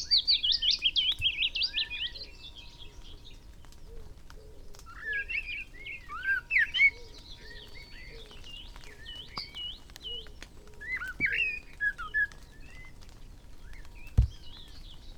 Green Ln, Malton, UK - willow warbler soundscape ... with added moisture ...
willow warbler soundscape ... with added moisture ... foggy morning ... moisture dripping from trees ... skywards pointing xlr SASS to Zoom H5 ... starts with goldfinch song ... then alternates and combines willow warbler and blackbird song as they move to different song posts and return ... bird song ... calls from ...chaffinch ... wood pigeon ... whitethroat ... song thrush ... pheasant ... yellowhammer ... skylark ... wren ... linnet ... background noise ...